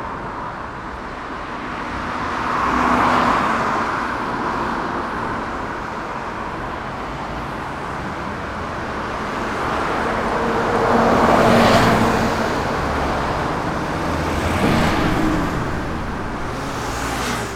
pedestrian crossing, Hanazono station, Kyoto - crossings sonority
Kyoto Prefecture, Japan, 4 November, 13:51